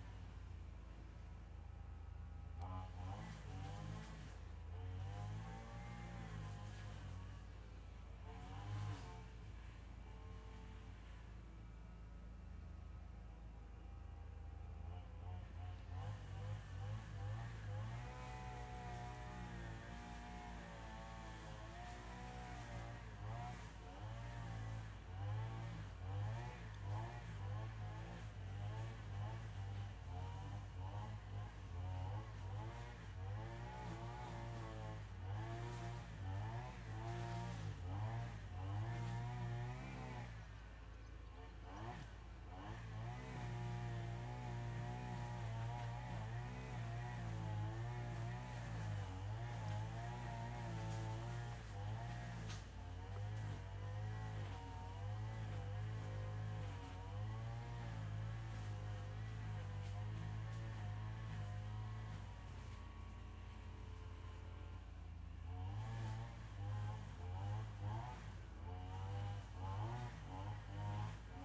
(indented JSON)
{
  "title": "Lavacquerie, France - Chain Saw",
  "date": "2015-08-21 10:27:00",
  "description": "Chain Saw near Les Esserres\nBinaural recording with Zoom H6",
  "latitude": "49.68",
  "longitude": "2.10",
  "altitude": "174",
  "timezone": "Europe/Paris"
}